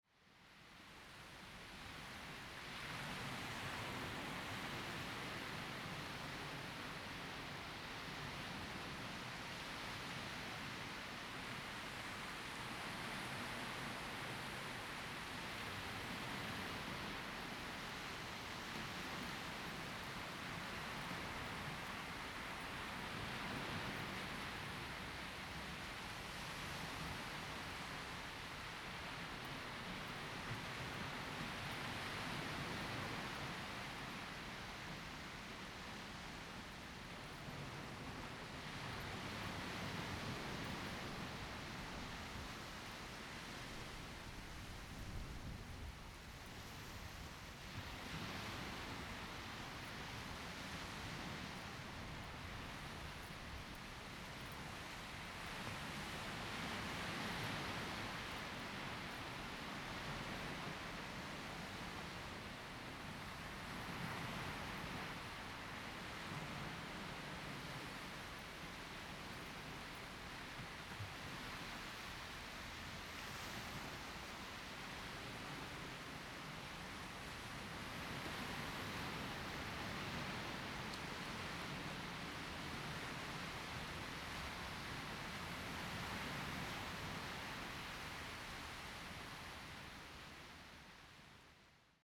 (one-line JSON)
{"title": "石朗, Lüdao Township - sound of the waves", "date": "2014-10-30 12:40:00", "description": "sound of the waves\nZoom H2n MS +XY", "latitude": "22.65", "longitude": "121.47", "altitude": "8", "timezone": "Asia/Taipei"}